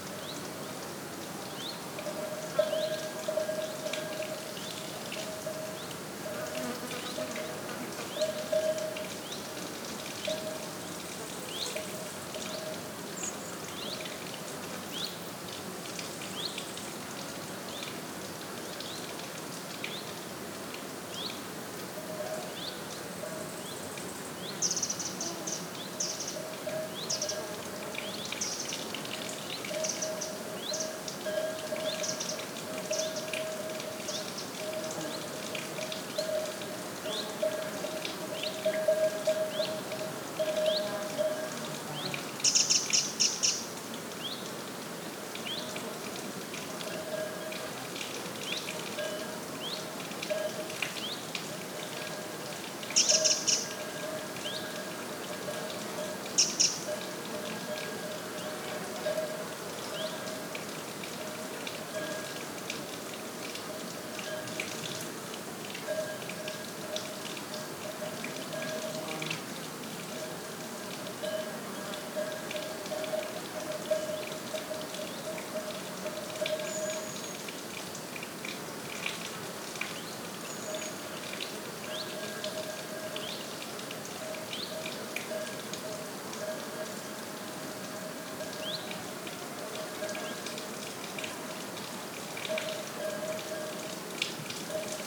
SBG, Font del Vicari - Mañana
Paisaje sonoro matutino en la fuente. Variedad de cantos y llamadas de aves, muchos insectos y unas vacas a cierta distancia.
St Bartomeu del Grau, Spain